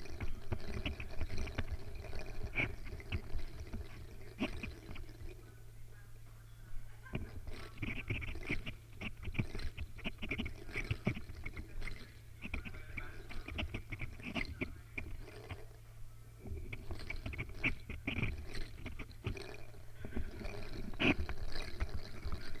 The Old Fire Station, Oxford, Oxfordshire, UK - Sonic Spindling at 'Playground on Fire'
This is the sound of my sonic spindle spinning yarn, as recorded through my little peavey mixing desk, and 'collected' by my Jez Riley French contact microphones. To explain a bit the context: 'Playground on Fire' was an event co-ordinated by my good comrade Stavroula Kounadea, which involved many artists taking over the Old Fire Station in Oxford for a day, to present myriad performances of all kinds in a day long ART extravaganza. I took this opportunity to develop the 'sonic spindling' concept which I developed in 2012, and set about turning a landing area by the stairs into a spindling performance area. My sonic spindle is a support spindle (i.e. it spins while balancing on a surface) which I made out of a double-pointed needle, some epoxy putty, and a selection of bells. I spin this device like a little spinning top inside a wooden bowl, to which I attach some contact microphones with blu-tack.